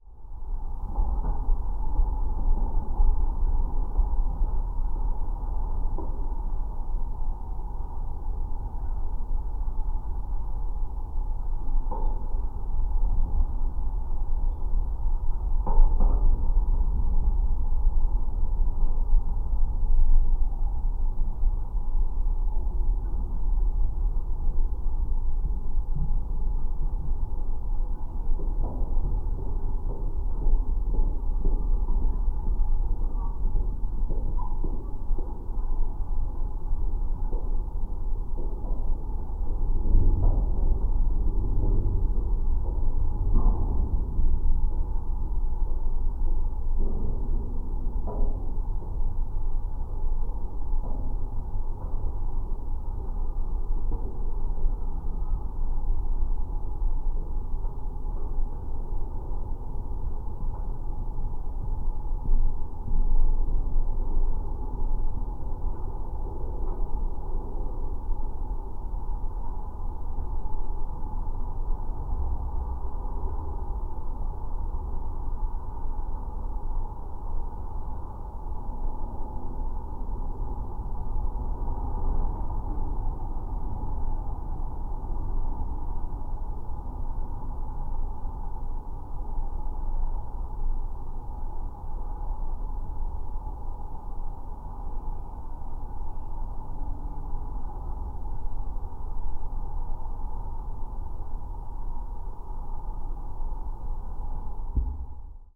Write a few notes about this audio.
Quiet geophone recording from railing of Seigethaler Pedestrian Bridge